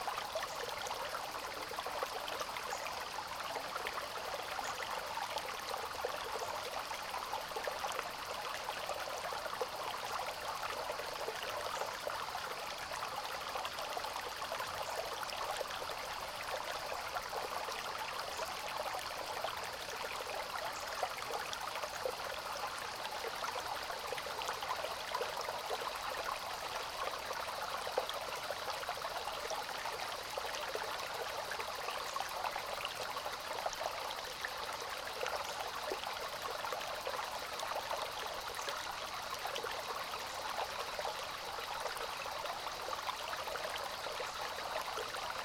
Unnamed Road, Piedralaves, Ávila, España - El fluir del Río Escorial o Garganta de Valdetejo
En un fin de semana de retiro en una finca cercana... fuimos a dar un paseito hasta un afluente del Río Tiétar; el Río Escorial o también llamado Garganta de Valdetejo. No había nadie y pude sentarme en unas rocas en medio del río a grabar. No es muy hondo y se podía escuchar el fluir del agua cristalina... Chicharras... Naturaleza... Agua... y yo :)
Ávila, Castilla y León, España, 10 July 2021